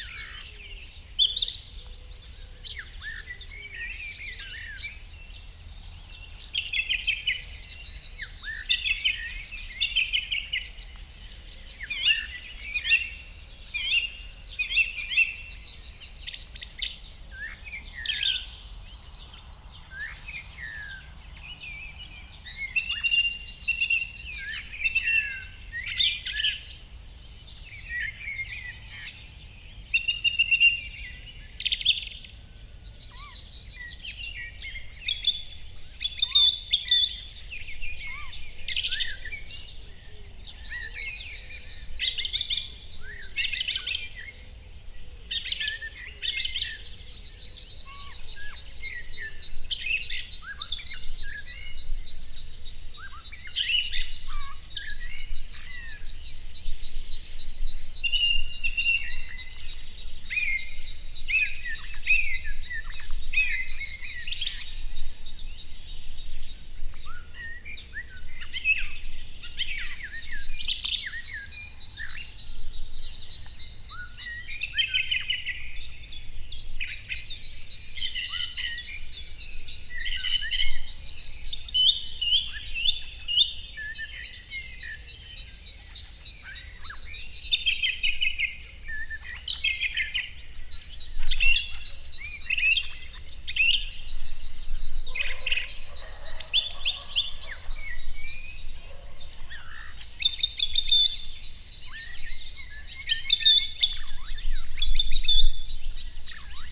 Stružinec, Jistebnice, Česko - morning birdscape in the fields
open hilly landscape: fields, and pastures with scattered vegetation; small cottage nearby with occasional traffic, otherwise pretty quiet
recording equipment: Zoom f8n with Audio-Technica BP4025 stereo mic
Jihozápad, Česko, 27 March 2022